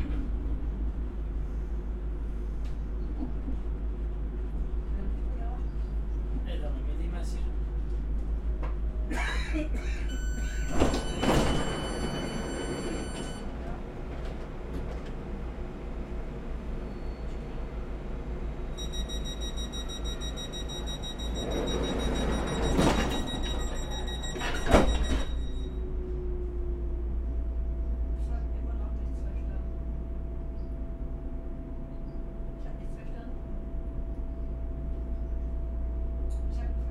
S-Bahn, Am Hauptbahnhof, Frankfurt am Main, Deutschland - S-Bahn to the airport
Ride to the airport in a very empty train...